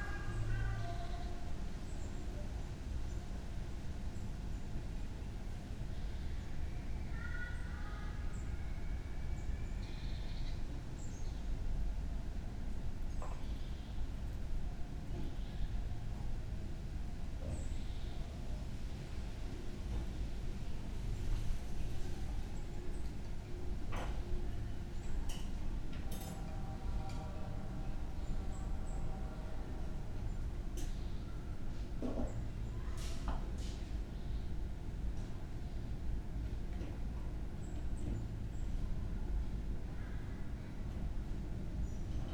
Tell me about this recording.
Tag der Deutschen Einheit (German unity day), churchbells in the yard, ambience, (Sony PCM D50, Primo EM172)